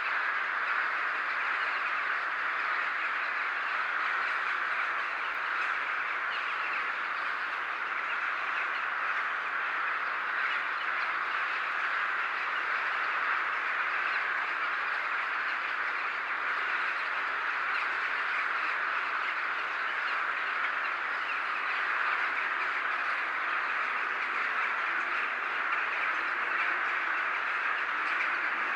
Lubomirski Palace, Przemyśl, Poland - (79 BI) Horde of Purple Martins
Binaural recording of huge amounts of birds (purple martins?) gathering on trees just before the dusk.
Recorded with Soundman OKM on Sony PCM D-100
województwo podkarpackie, Polska, 27 December 2016